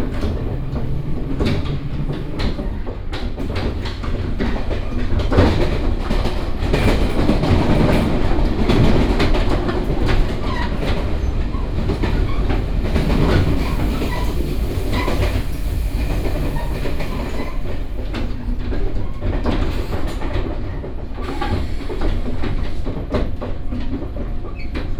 Yingge Dist., New Taipei City - the train
In the train compartment, The passage between the carriage and the carriage, Binaural recordings, Sony PCM D100+ Soundman OKM II